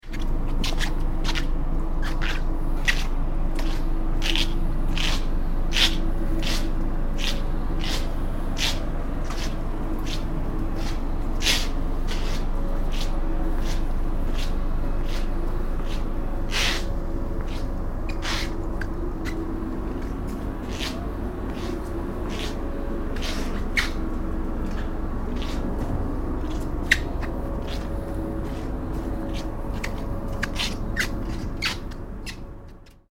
recorded on night ferry travemuende - trelleborg, july 19 to 20, 2008.